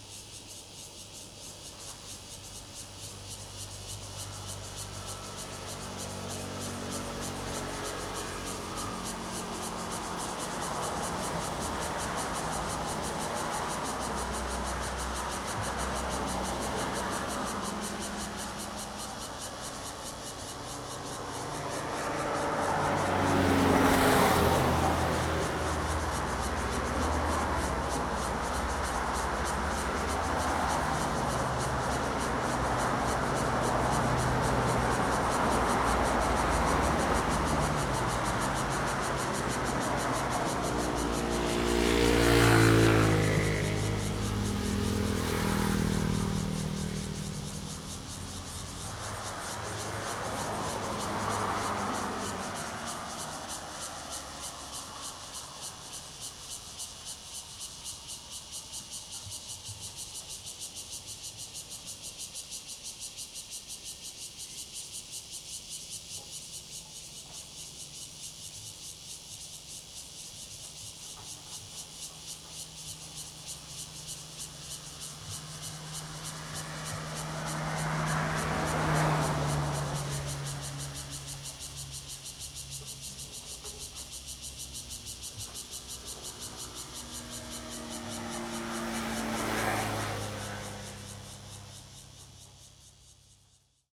Donghe Township, Taitung County - Cicadas and Traffic Sound
Traffic Sound, Cicadas sound
Zoom H2n MS +XY